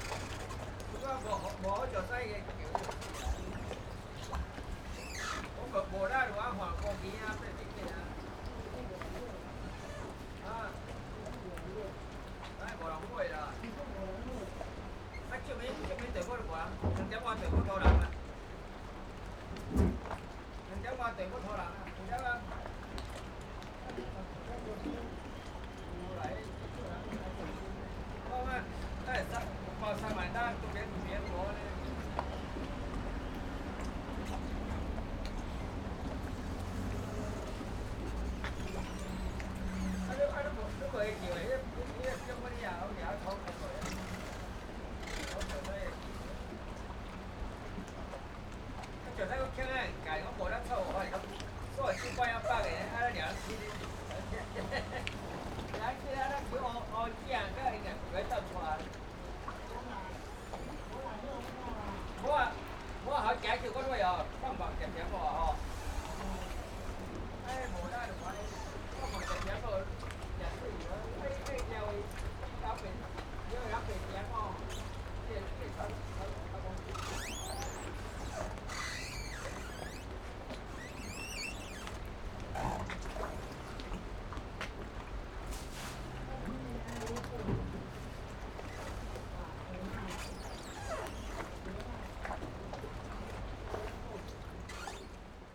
{"title": "風櫃西港漁港, Penghu County - Small fishing port", "date": "2014-10-23 15:19:00", "description": "Small fishing port, Small fishing village\nZoom H6 +Rode NT4", "latitude": "23.54", "longitude": "119.54", "altitude": "4", "timezone": "Asia/Taipei"}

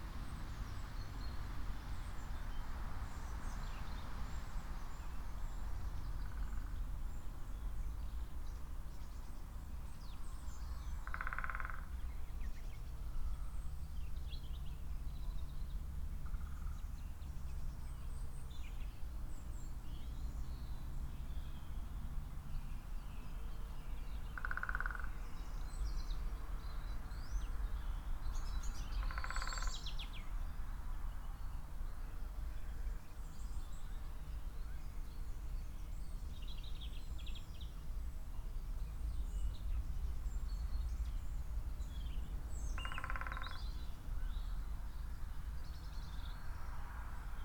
{"title": "Punnetts Town, UK - Great Spotted Woodpecker Drumming", "date": "2017-02-04 14:00:00", "description": "Great Spotted Woodpecker drumming in nearby Oak tree. Tascam DR-05 internal mics with wind muff.", "latitude": "50.95", "longitude": "0.31", "altitude": "123", "timezone": "GMT+1"}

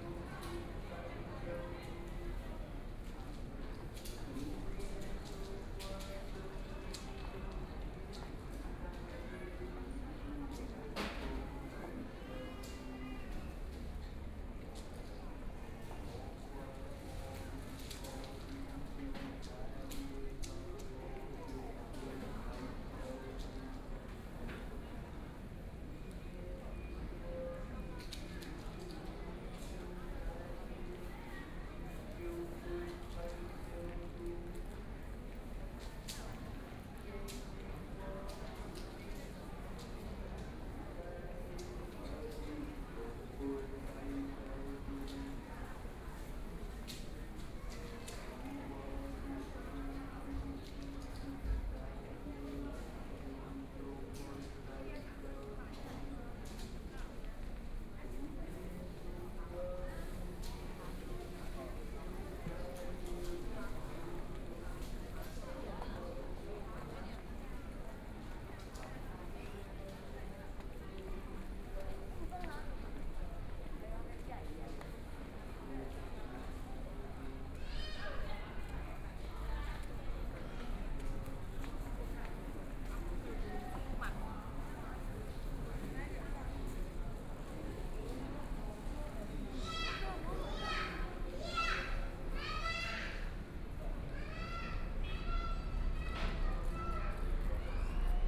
{"title": "行天宮, Taipei City - walking in the temple", "date": "2014-01-20 15:56:00", "description": "Walking through the temple inside, Binaural recordings, Zoom H4n+ Soundman OKM II", "latitude": "25.06", "longitude": "121.53", "timezone": "Asia/Taipei"}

